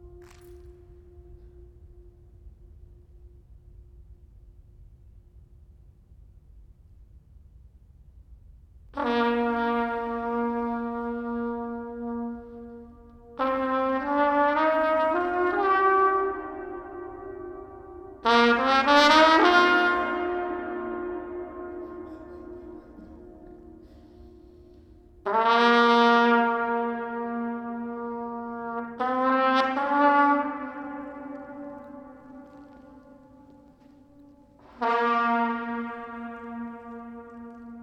rijeka, d404, tunel, trumpet, reverberation